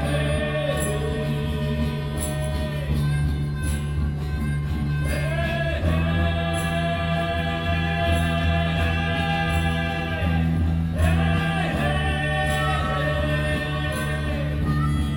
Legislative Yuan, Taiwan - Protest songs
Protest songs, Antinuclear, Zoom H4n+ Soundman OKM II, Best with Headphone( SoundMap20130526- 7)
26 May 2013, ~19:00